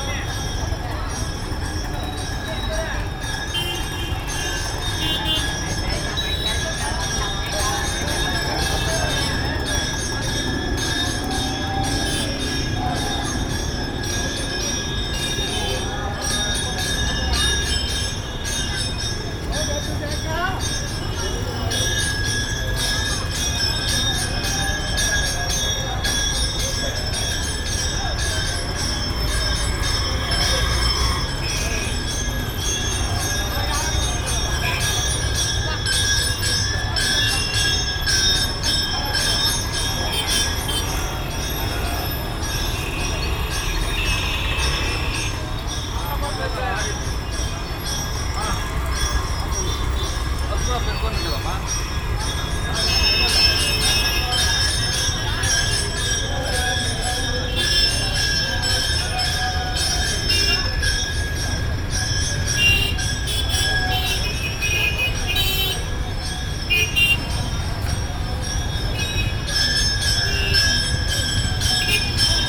Bangalore, BVK Lyengar Rd, near a temple
India, Karnataka, Bangalore, street, temple
Karnataka, India, 14 November 2009